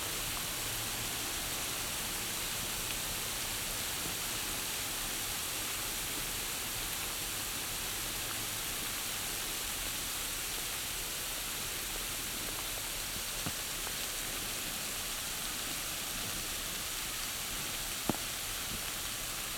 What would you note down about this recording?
The Damned's Condoms/ in memory of Our glorious Heroes.